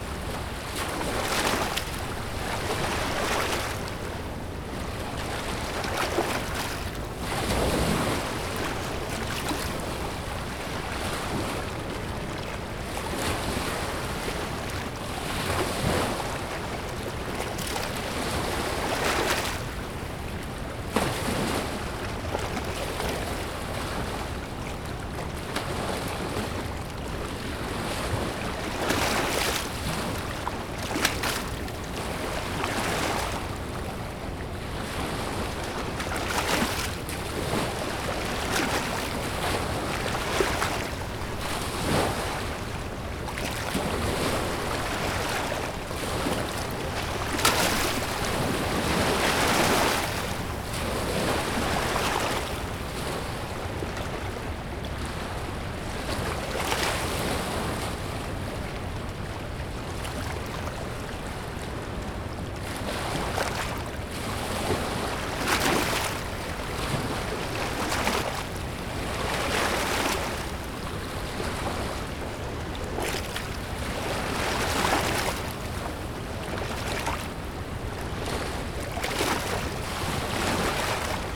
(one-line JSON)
{"title": "Dam Heights Rd, Prairie Du Sac, WI, USA - Shore of the Wisconsin River near the Prairie du Sac Dam", "date": "2019-03-28 15:57:00", "description": "Recorded at the public boat launch near the Prairie du Sac Dam. Sunny day, lots of boats fishing. Handheld recording with a Tascam DR-40 Linear PCM Recorder.", "latitude": "43.31", "longitude": "-89.73", "altitude": "222", "timezone": "America/Chicago"}